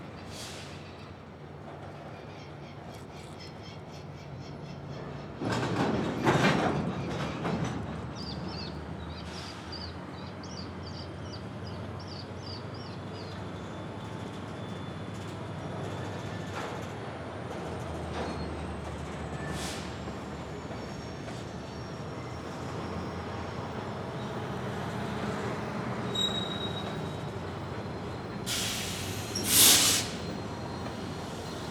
Recorded on the St Anthony Parkway Bridge above the Northtown Rail Yard. Several trains can be heard. Some are stopping, some are passing through, and one down the line was forming a train. Bridge vehicle traffic and wildlife can also be heard.
Minnesota, United States, 17 March